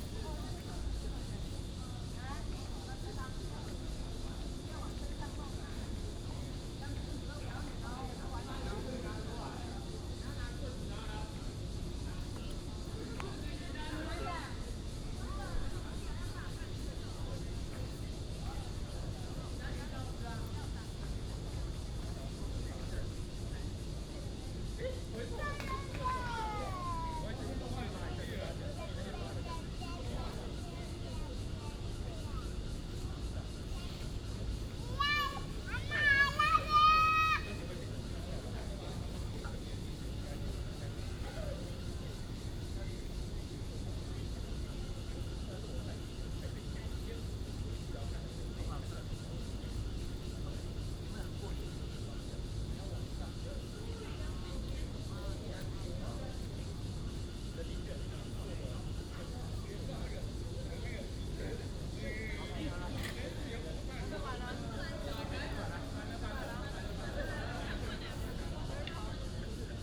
臺灣大學, Da'an District, Taipei City - The university campus at night
The university campus at night
25 July 2015, 18:58